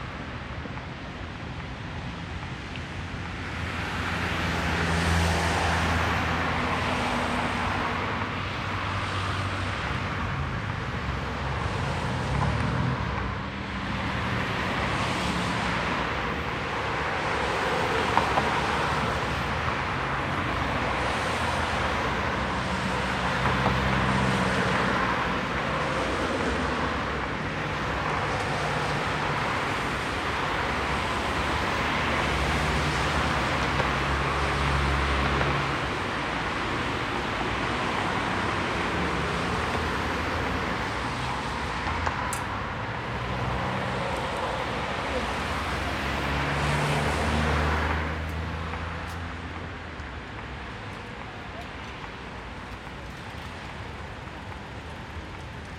Osloer/Stockholmer Straße, Berlin, Deutschland - Osloer/Stockholmer Straße, Berlin - heavy traffic, passers-by
Osloer/Stockholmer Straße, Berlin - heavy traffic, passers-by.
[I used the Hi-MD-recorder Sony MZ-NH900 with external microphone Beyerdynamic MCE 82]
Berlin, Germany